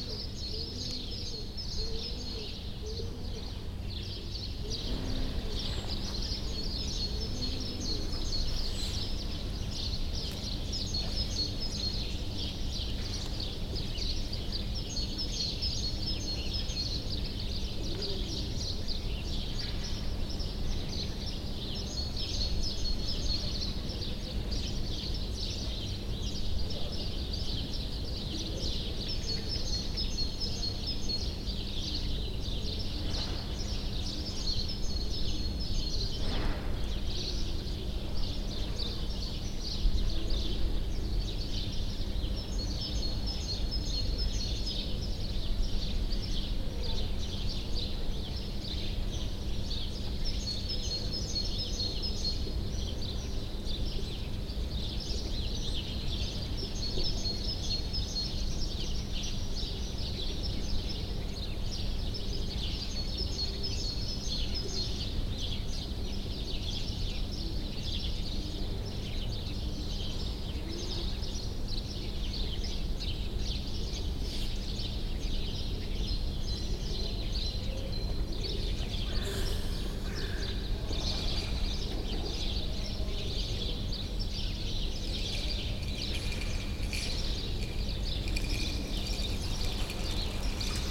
Hribarjevo nabrežje, Ljubljana, Slovenia - At the Ljubljanica river embankment
A few minutes spend along Ljubljanica river embankment listening to the almost silent Saturday morning under #Stayathome #OstaniDoma quarantine situation. It has been a very long time since one could enjoy this kind of soundscape in the city center.
Upravna Enota Ljubljana, Slovenija, 28 March 2020